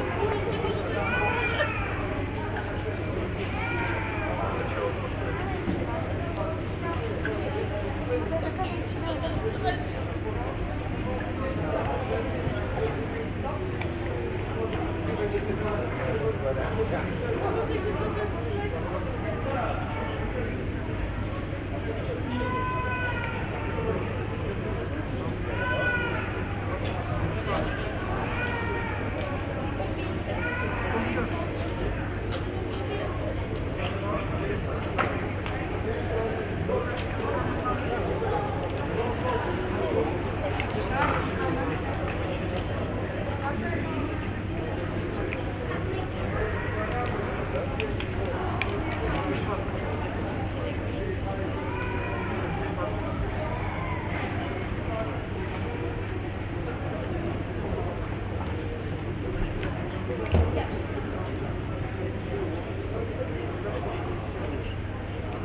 {"title": "Main Drinking Room at Krynica-Zdrój, Polska - (650 BI) Entering main drinking room", "date": "2020-07-25 14:30:00", "description": "Entering the main drinking room, walking around + static ambience for a while.\nRecorded with DPA 4560 on Sound Devices MixPre6 II.", "latitude": "49.42", "longitude": "20.96", "altitude": "573", "timezone": "Europe/Warsaw"}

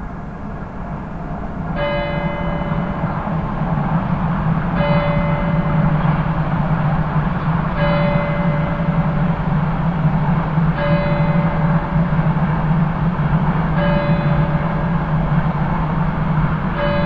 {"title": "cologne, stadtgarten, kinder am baum - koeln, stadtgarten, am baum, abends", "description": "am grossen liegenden baumstamm - wiese parkseite nord west- atmo mit kirchglocken der christus kirche\nstereofeldaufnahmen im september 07 abends\nproject: klang raum garten/ sound in public spaces - in & outdoor nearfield recordings", "latitude": "50.94", "longitude": "6.94", "altitude": "53", "timezone": "GMT+1"}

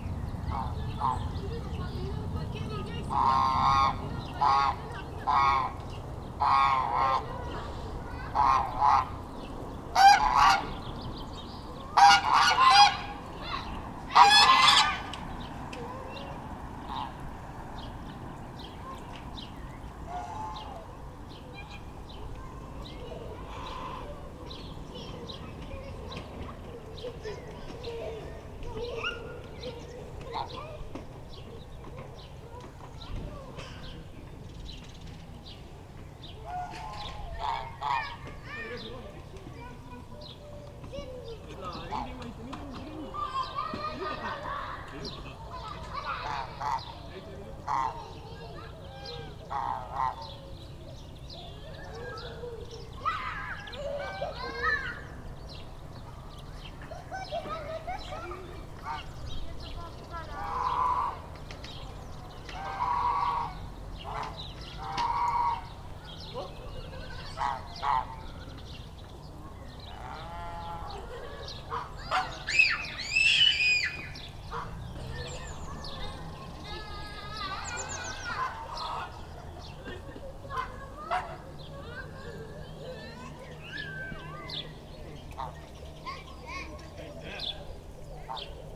{"title": "Mellery, Villers-la-Ville, Belgique - Playground", "date": "2017-04-09 17:40:00", "description": "Children playing in a playground and geese shouting on the neighborhood. Mellery is a small and very quiet village.", "latitude": "50.58", "longitude": "4.57", "altitude": "131", "timezone": "Europe/Brussels"}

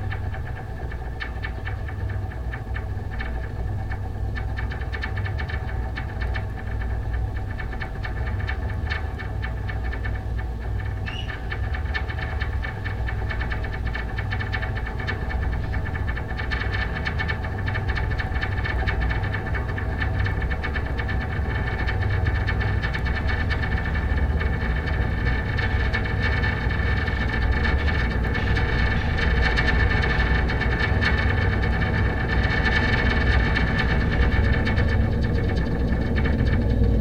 {"date": "2009-05-11 23:41:00", "description": "Stazione Topolo 1999, high tension cable, Italy", "latitude": "46.18", "longitude": "13.60", "altitude": "552", "timezone": "Europe/Berlin"}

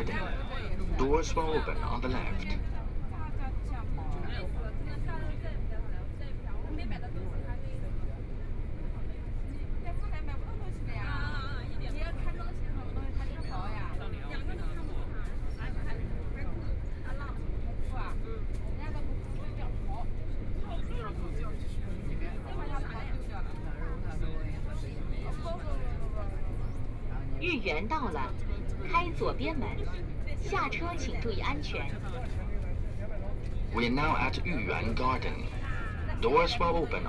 {"title": "Huangpu District, Shanghai - Line 10 (Shanghai Metro)", "date": "2013-12-03 17:24:00", "description": "From East Nanjing Road Station to Laoximen Station, Binaural recording, Zoom H6+ Soundman OKM II", "latitude": "31.22", "longitude": "121.48", "altitude": "8", "timezone": "Asia/Shanghai"}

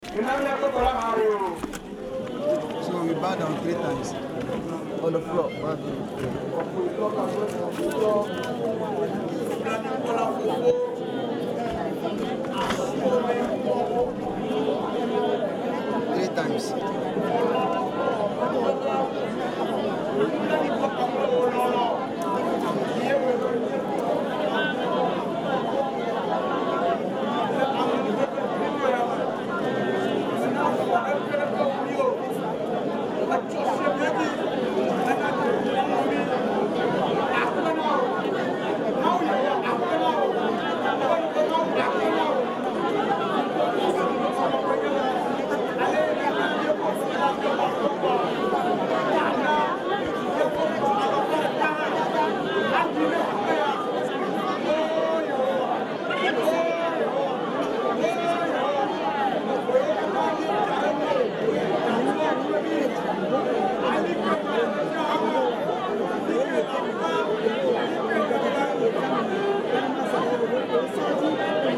{"title": "Togbe Tawiah St, Ho, Ghana - church of ARS service: On your knees!", "date": "2004-08-26 17:51:00", "description": "church of ARS service: \"On your knees!\"\nThis is the point where everybody gets on his knees to pray to the almighty.\nThe church of ARS has a nice website. NB: i am not a believer, so i don't chase souls.", "latitude": "6.61", "longitude": "0.47", "altitude": "503", "timezone": "Africa/Accra"}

